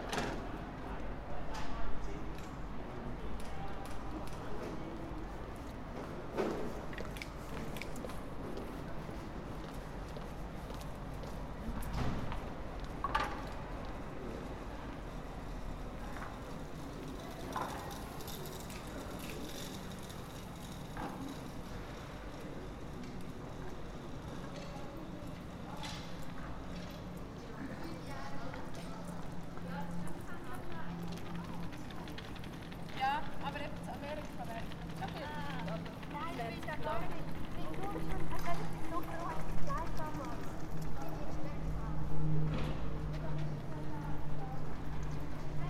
Street, Mall, Aarau, Schweiz - Kasinostrasse
Voices, a plane and other noises in front of a mall at Kasinostrasse.